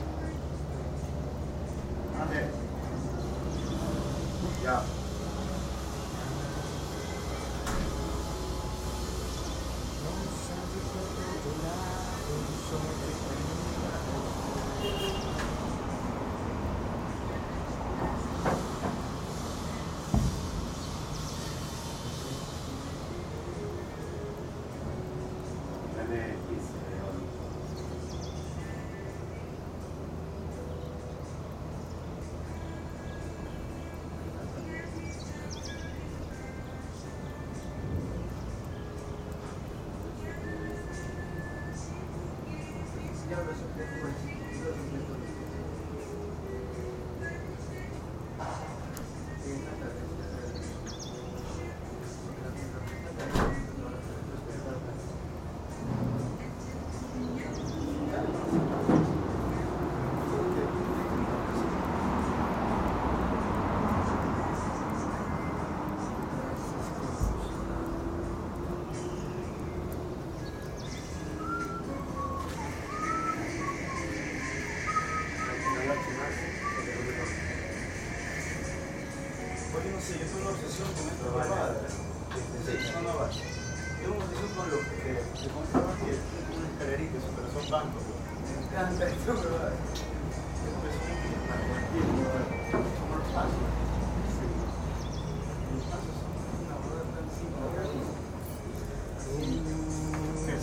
Ciudadela Bellavista, Guayaquil, Ecuador - From JML house
While waiting for lunch I decided to point the mics to the outdoor while me and friends talked about stuff. TASCAM DR100
28 February 2016, 11:00